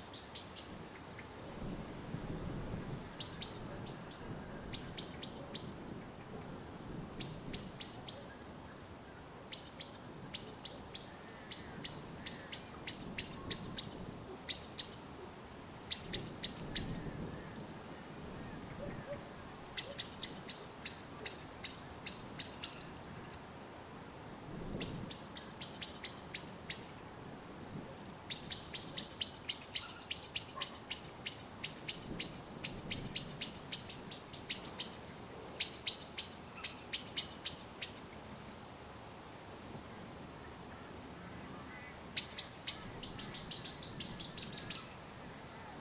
Chemin des Ronferons, Merville-Franceville-Plage, France - Birds
Birds around my home place, Zoom H6
November 2018